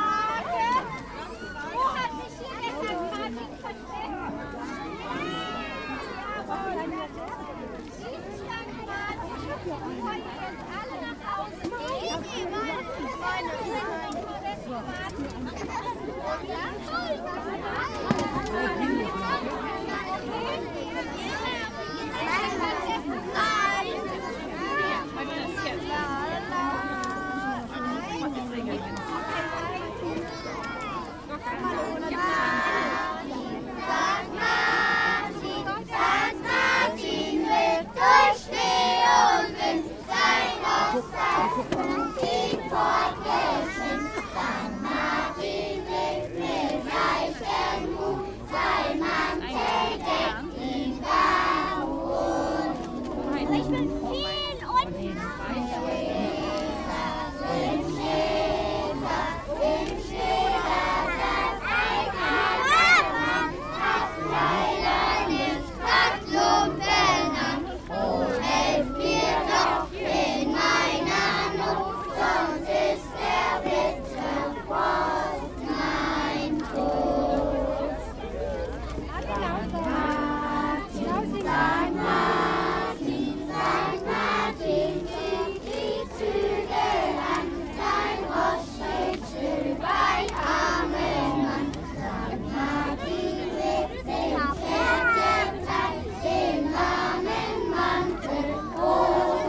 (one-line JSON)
{"title": "Bad Orb, Hauptstrasse, St. Martins-Umzug - Laternelaufen 2018", "date": "2018-11-08 17:32:00", "description": "A kindergarden in Bad Orb walks with the children, the parents and the organisers through Bad Orb with lanterns, singing traditional songs about St. Martin, a common practice in Germany, in catholic towns like Orb they sing songs about the saint st. martin. Recorded with the H2 by Zoom.", "latitude": "50.23", "longitude": "9.35", "altitude": "176", "timezone": "Europe/Berlin"}